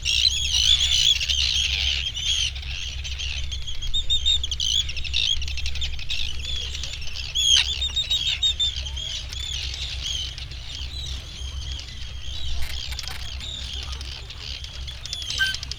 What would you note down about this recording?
Inner Farne ... Farne Islands ... arctic tern colony ... they actively defend their nesting and air space ... and then some ... background noise from people ... planes ... boats and creaking boards ... warm dry sunny day ... parabolic ...